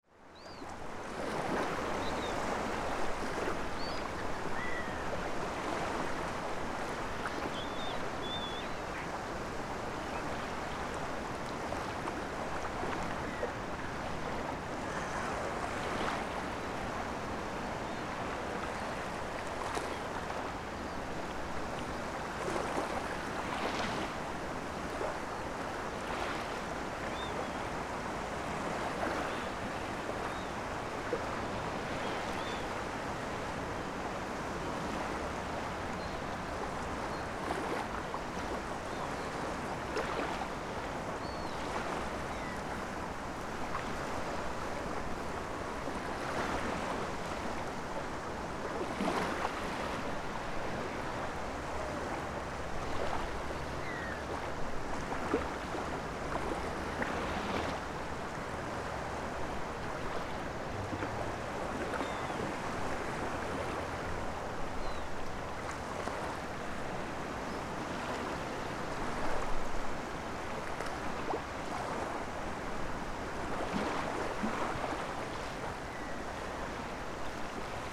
wind, waves, gulls, distant dj music in the cafe
9 August 2011